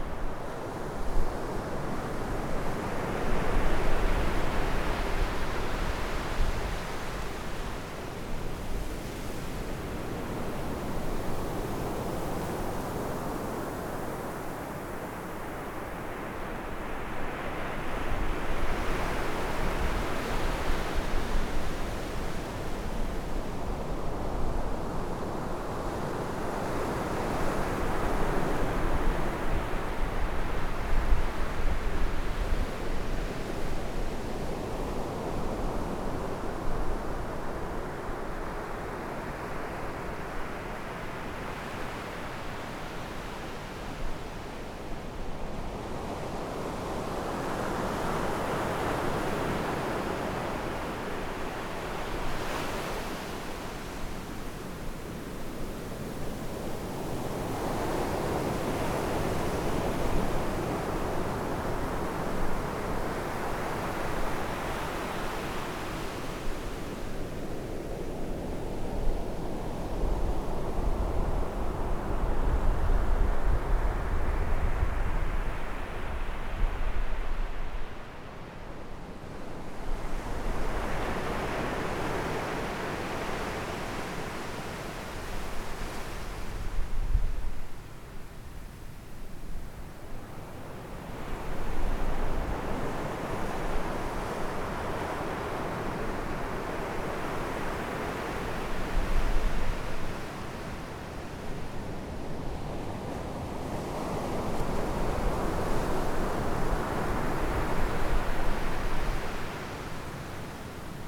Taitung City, Taiwan - Sound of the waves
At the beach, Sound of the waves, Zoom H6 M/S, Rode NT4
Taitung City, Taitung County, Taiwan